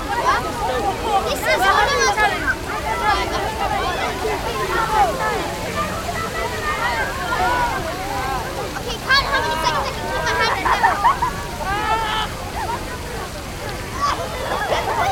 Londres, Royaume-Uni - Fontaine
Near the fountain, Zoom H6